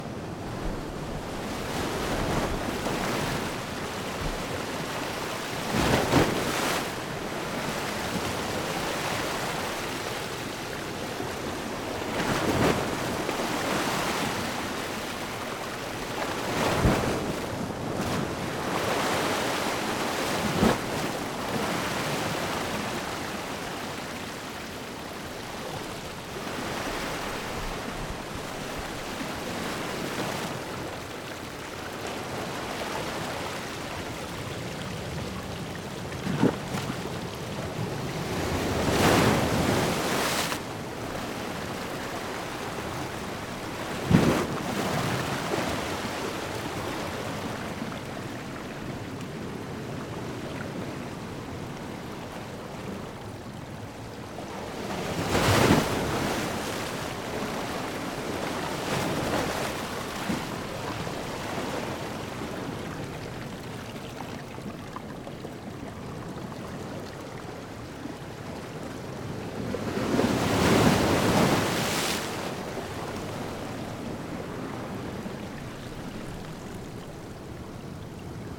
Valdivia, Chili - AMB LANCOYEN OCEAN WAVES ROCKS CLOSE 2 MS MKH MATRICED
This is a recording of a beach near to Loncoyén. Mics are pointed towards rocks, focused on waves splashes. I used Sennheiser MS microphones (MKH8050 MKH30) and a Sound Devices 633.